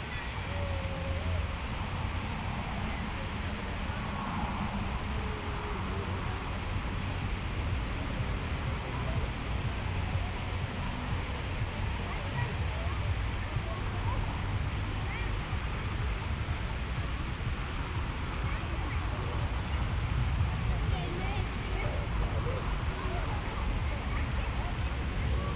Ventspils, Latvia, at central fountain
Big "sea boat" type fountain with kids playing in it.